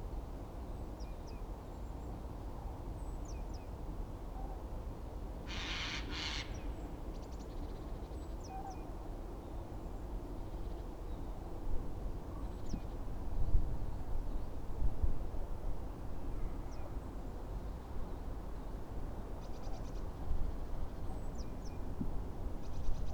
Panketal, Zepernick, Berlin Buch - open field ambience
open field between Berlin Buch, Panketal and Hobrechtsfelde. The areas around were used as disposal for Berlin's wastewaster over decades.
(Sony PCM D50)